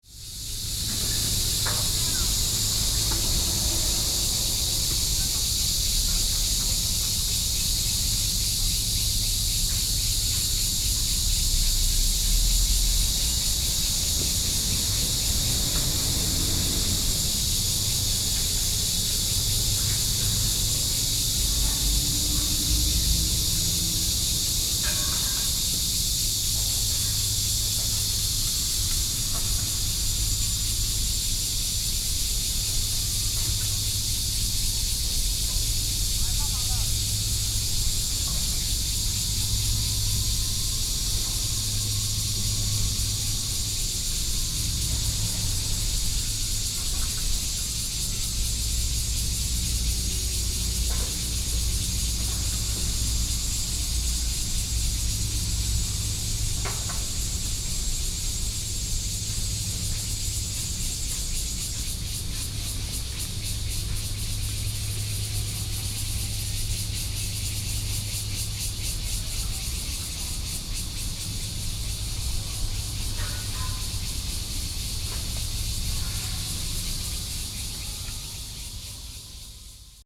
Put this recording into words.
Cicadas cry, Traffic Sound, hot weather, Road construction Sound, Sony PCM D50+ Soundman OKM II